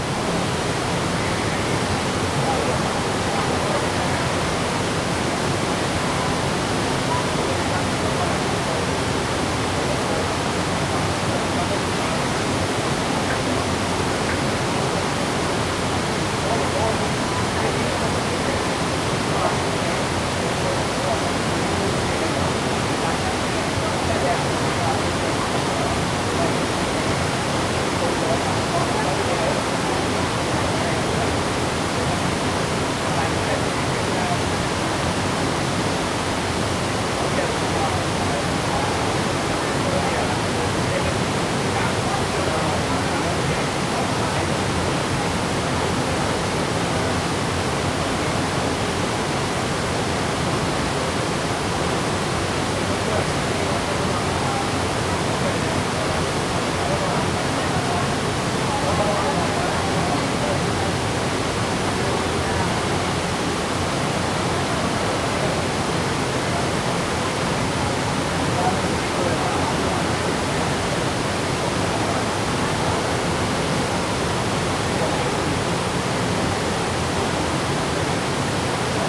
Shifen Waterfall, Pingxi District, New Taipei City - waterfall
waterfall, tourist
Zoom H2n MS+ XY
Pingxi District, New Taipei City, Taiwan